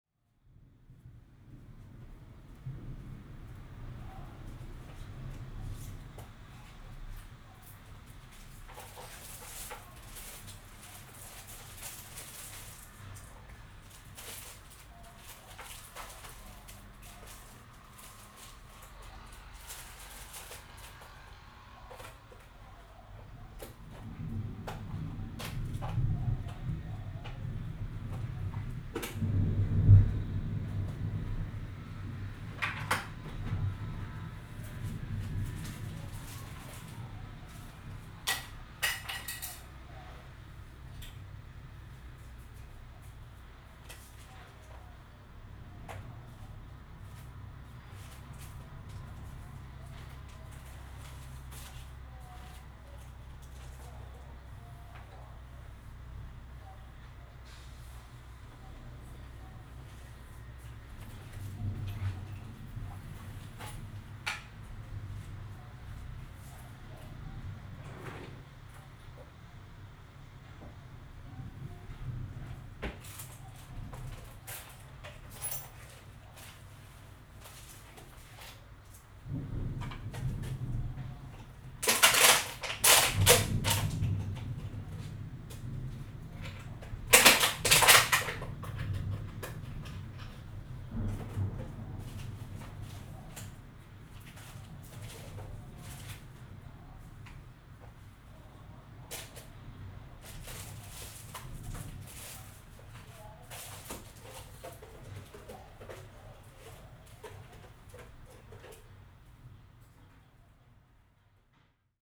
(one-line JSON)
{"title": "新北市板橋區 - ayatakahashi", "date": "2013-07-08 10:30:00", "description": "Thunderstorm, inside the house, Zoom H4n", "latitude": "25.03", "longitude": "121.47", "altitude": "14", "timezone": "Asia/Taipei"}